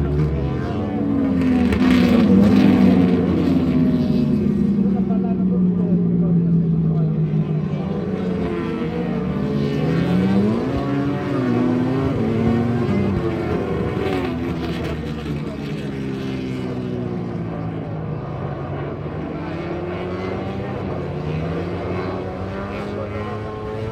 {
  "title": "Donington Park Circuit, Derby, United Kingdom - British Motorcycle Grand Prix 2004 ... free practice ...",
  "date": "2004-07-24 10:25:00",
  "description": "British Motorcycle Grand Prix 2004 ... free practice part two ... one point stereo mic to minidisk ...",
  "latitude": "52.83",
  "longitude": "-1.38",
  "altitude": "94",
  "timezone": "Europe/London"
}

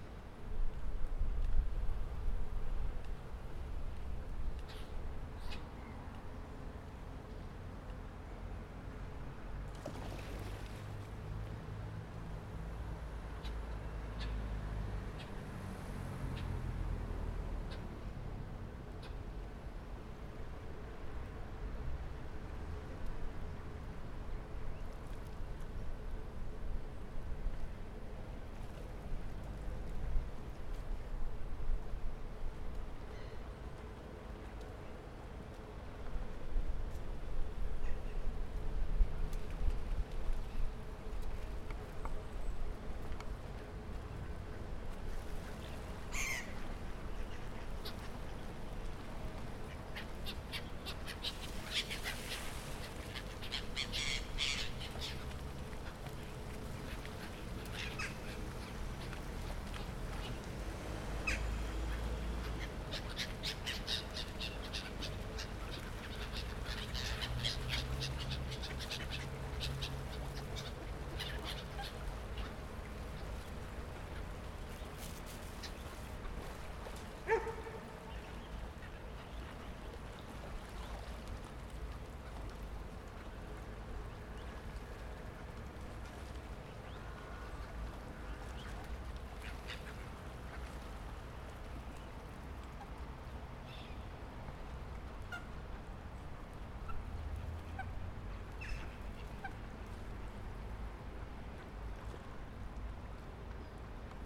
{
  "title": "Jozef Israëlskade, Amsterdam, Nederland - Meeuwen / Guls",
  "date": "2013-10-18 14:00:00",
  "description": "(description in English below)\nMeeuwen en andere vogels komen graag van buiten de stad naar de Jozef Israelskade, om hier gevoerd te worden door de mensen uit de stad. Het geluid van de vogels trekt mensen aan en geeft ze het gevoel toch een beetje natuur in de stad te hebben.\nGulls and other birds like to come from out of town to the Joseph Israelskade, to be fed by the people of the city. The sound of birds can make you feel like there's a bit of nature in the city. The sound attracts people.",
  "latitude": "52.35",
  "longitude": "4.91",
  "altitude": "5",
  "timezone": "Europe/Amsterdam"
}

